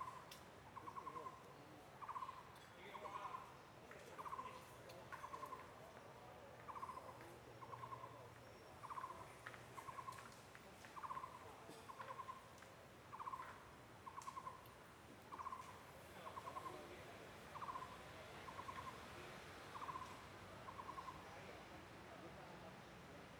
朱昌公園, Taipei City - Birdsong
Birdsong, in the Park, Traffic Sound, Children's play area
Please turn up the volume
Zoom H6 M/S